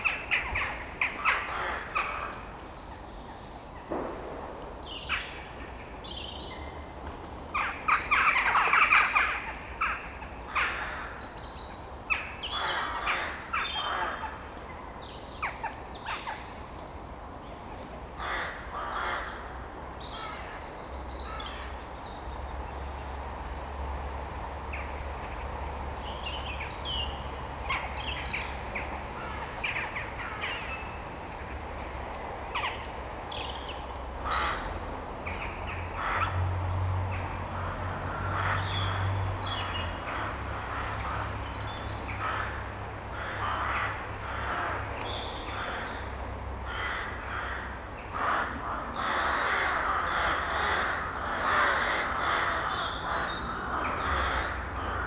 Maintenon, France - Crows war
This is the second time I put a recorder in this wood. As it's private, nobody is going here. Crows and jackdaws live on an elevated tree. Every evening, quite early, these birds talk about their day. I put a recorder, hidden, on an abandoned trunk. There's less cars than yesterday as everybody is sleeping after the too fat Christmas repast. It was the quite only and last chance to record the birds. Unfortunately, a long painful plane... This is the crows war, every early evening in winter it's like that. There's no other moment as this in daylight times, groups are dislocated in the fields, essentially to find food.